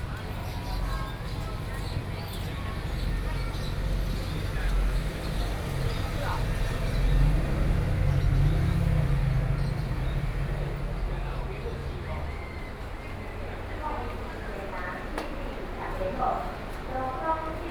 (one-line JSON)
{"title": "Zhongzheng St., 羅東鎮仁和里 - traditional market", "date": "2014-07-01 10:37:00", "description": "Walking through the traditional market, Traffic Sound\nSony PCM D50+ Soundman OKM II", "latitude": "24.67", "longitude": "121.77", "altitude": "16", "timezone": "Asia/Taipei"}